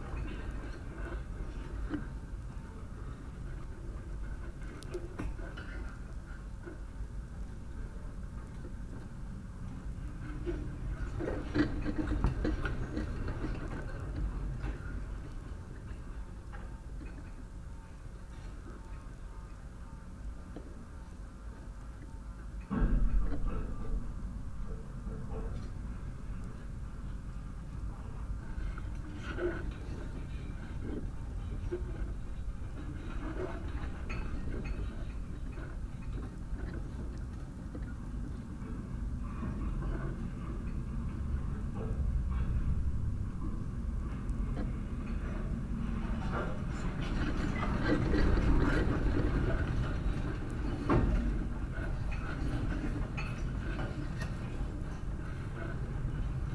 Van Buren Trail, South Haven, Michigan, USA - Van Buren Trail Fence
Contact mic recording from fence along Van Buren Trail
23 July, ~15:00